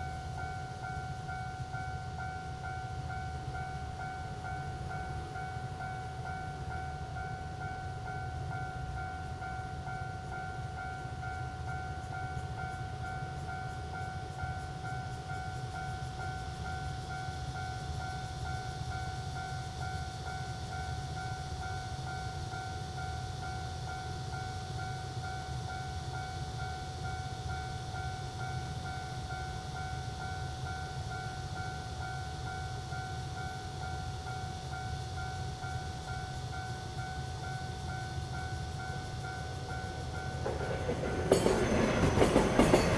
Ln., Xinzhong N. Rd., Zhongli Dist. - Railroad Crossing
Narrow alley, Cicada cry, Traffic sound, The train runs through, Railroad Crossing
Zoom H2n MS+XY
28 July 2017, 06:28